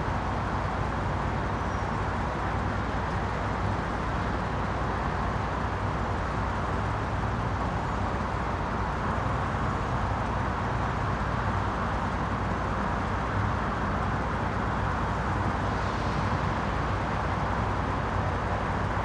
Jasper Hwy, Hardeeville, SC, USA - South Carolina Welcome Center Parking Lot
A recording taken in the parking lot of the South Carolina welcome center/rest stop. The recorder was positioned so that the highway was to the left of the recorder. Some minor processing was done in post.
[Tascam Dr-100mkiii, on-board uni mics]